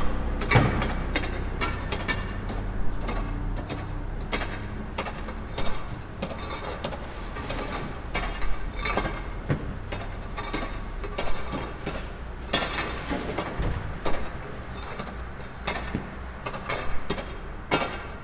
Ive recorded three workers breaking stiffed snow and ice in the walkway. You can here trams from afar and purr of pigeons. Cars almost don drive. Yesterday I had a walk in Karlov neighbourhood and below the Vyšehrad. The snow calamity caused, that there are almost not cars in the streets. And so the town got quiet beautifully and we can walk in the middle of the streets like the kings.
Prague, Czech Republic